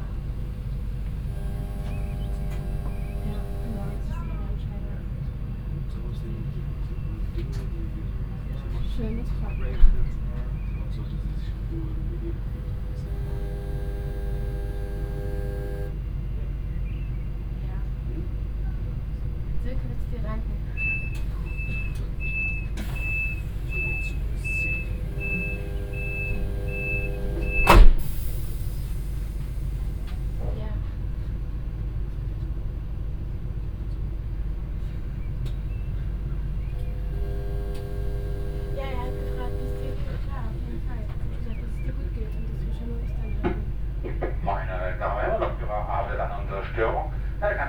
Hamm, main station - defective train, 1h late, waiting
Hamm, Hauptbahnhof, main station. the train can't continue because of an electrical defect. doors are open, people waiting in and outside, making phone calls, talking, ideling. a train passes at the opposite track. a strange periodic hum from a hidden control panel indicates malfunction.
(tech note: Olympus LS5, OKM2, binaural.)